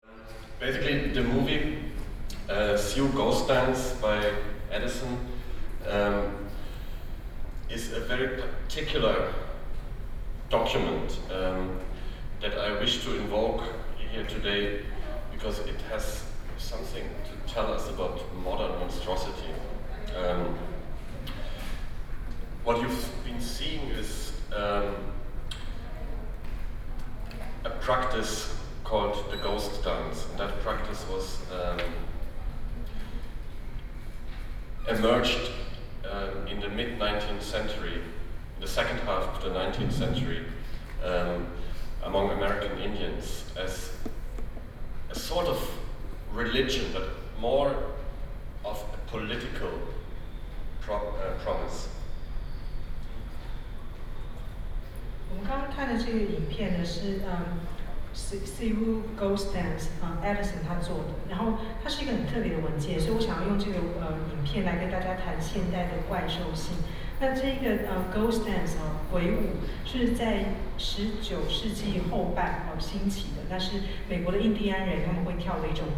TAIPEI FINE ARTS MUSEUM - Speech
Curators are speech in the MUSEUM, Sony PCM D50 + Soundman OKM II, Best with Headphone( SoundMap20120929- 22)